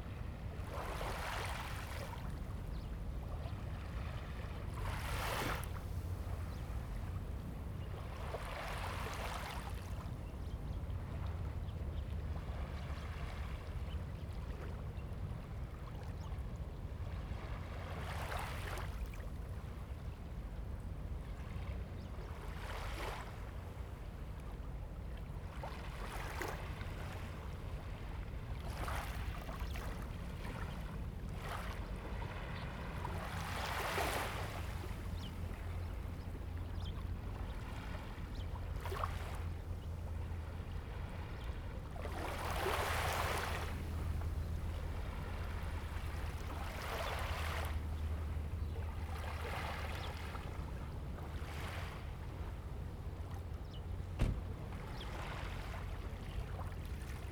南寮港, Mituo Dist., Kaohsiung City - Small beach
Small beach beside the fishing port, Sound of the waves, Construction sound
Zoom H2n MS+XY